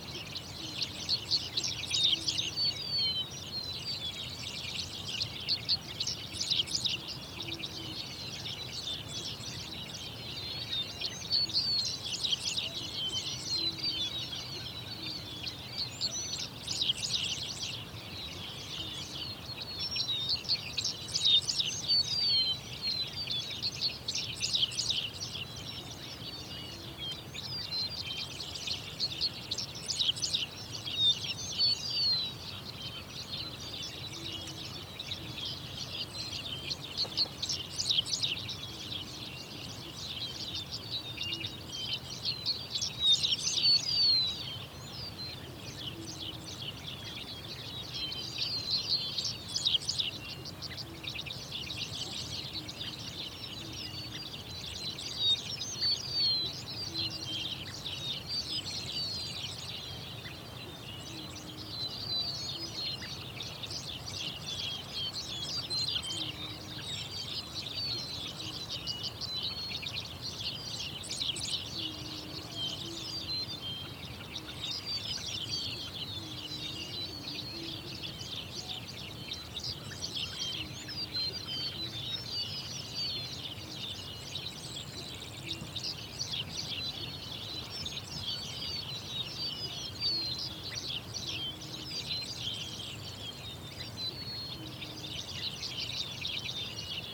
Tall Grass Prairie - Birds singing in the countryside, Oklahoma, USA
Early morning birds singing in the tall-grass prairie reserve in Oklahoma, USA. Sound recorded by a MS setup Schoeps CCM41+CCM8 Sound Devices 788T recorder with CL8 MS is encoded in STEREO Left-Right recorded in may 2013 in Oklahoma (close to Pawhuska), USA.